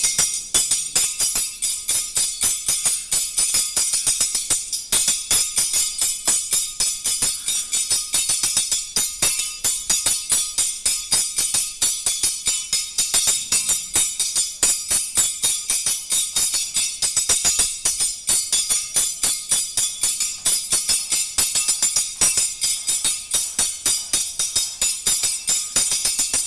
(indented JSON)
{
  "title": "maison, Rue LIB, Dakar, Senegal - scissor boiy",
  "date": "2020-03-05 14:16:00",
  "latitude": "14.71",
  "longitude": "-17.46",
  "altitude": "28",
  "timezone": "Africa/Dakar"
}